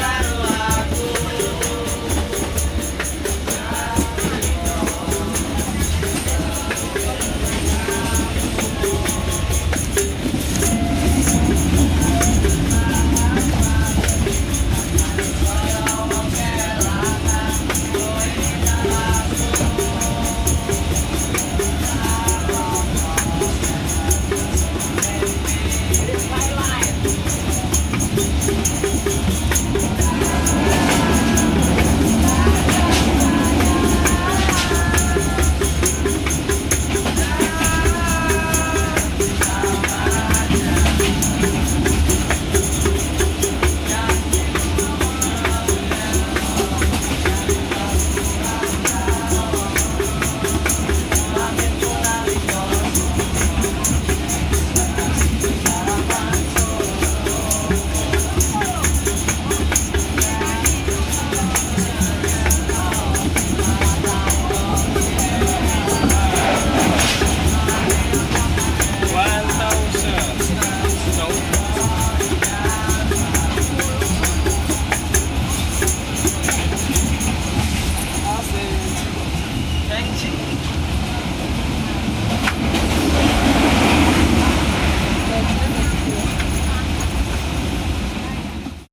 Rail line, Jl. Raya Gelam, Gelam, Kec. Candi, Kabupaten Sidoarjo, Jawa Timur, Indonésie - Lowcost Train from Surabaya to Probolinggo
Peanuts Vendors, musicians (with Upright Bass and percussions ..) getting on the train beetween 2 stations.